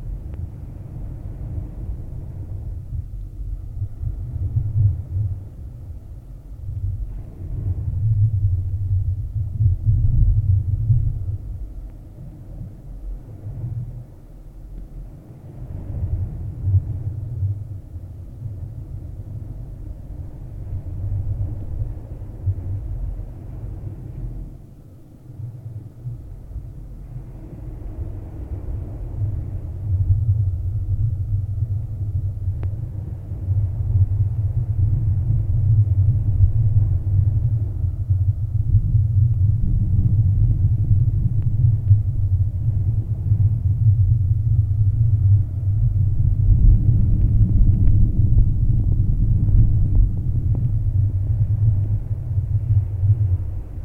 Stalos, Crete, in a concrete tube - hydrophone in a sand
hydrophone buried in the sand at the concrete tube